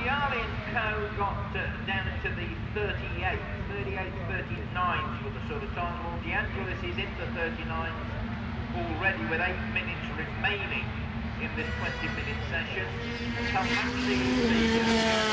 Castle Donington, UK - British Motorcycle Grand Prix 2002 ... 125 ...
125cc motorcycle warm up ... Starkeys ... Donington Park ... warm up and all associated noise ... Sony ECM 959 one point stereo mic to Sony Minidisk ...